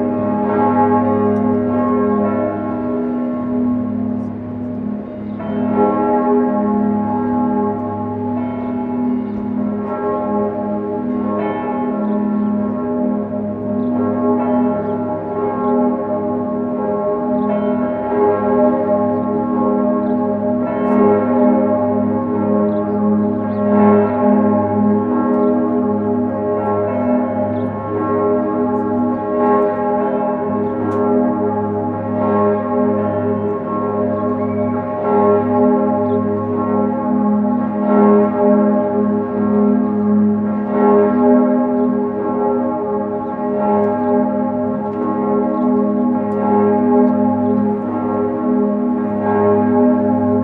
Cathedral, Bern, Switzerland - Church bells at noon from the top of the Cathedral of Bern
Church Bells at noon from the top of the Cathedral of Bern (Switzerland)
Recorded by an ORTF setup Schoeps CCM4 x 2
On a MixPre6 Sound Devices
Recorded on 24th of Feb. 2019 at 12:00
Sound Ref: CH-190224-04